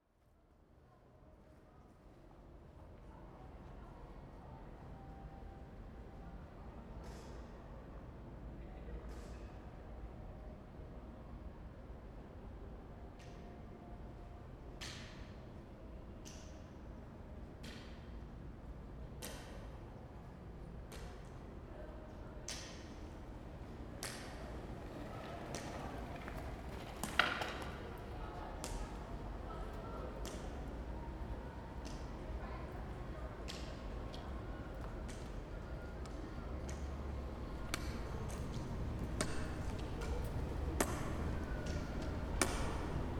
Opava, Czech Republic - Walking Stick Piece for John Cage in Opava
Site specific sound piece and installation for the exhibition Na houby, curated by Martin Klimeš a tribute to John Cage 100 years birthday. About 15 walking stick are left in the exhibition at Divadelni klub and Gottfrei. I recorded my walk with one of the walking sticks in front of the club and around the Cathedral. Finally i walked in the crowd of the vernissage and left the stick there.